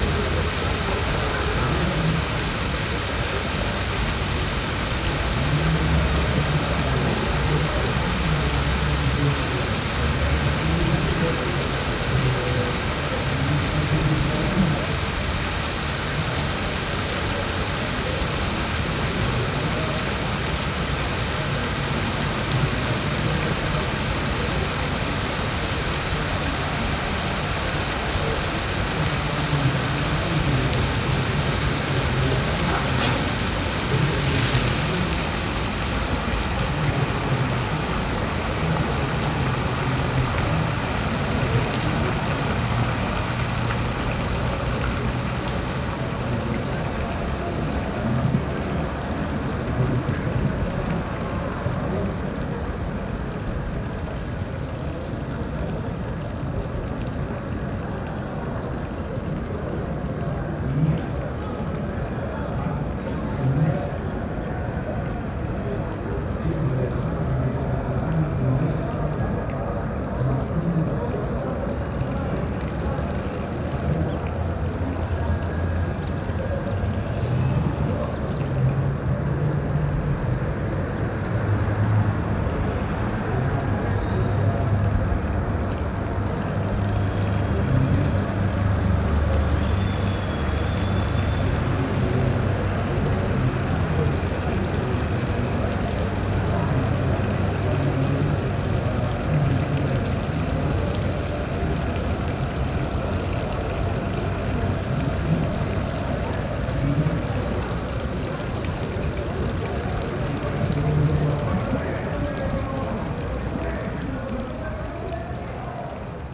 River Drava, Maribor, Slovenia - bridge fountain from the surface
same fountain recorded from the surface - recording started just a minute after the underwater one. in the background you can here the evening's euro2012 match via big screen tvs in all the bars along the river.